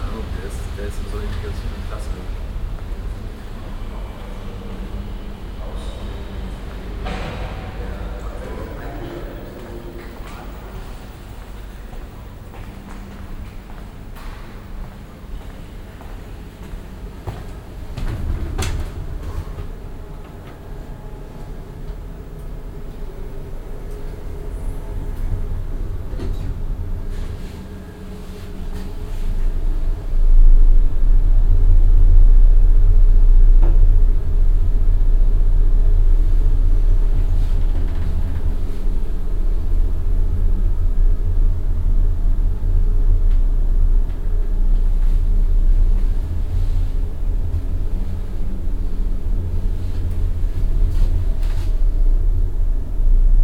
Düsseldorf, Eiskellerstrasse, Kunstakademie
Mittags an und in der Kunstakademie. Ein Gang durch den Eingangsbereich und das Foyer. Eine Fahrt mit Aufzug
soundmap nrw: social ambiences/ listen to the people - in & outdoor nearfield recordings
20 August 2008, ~09:00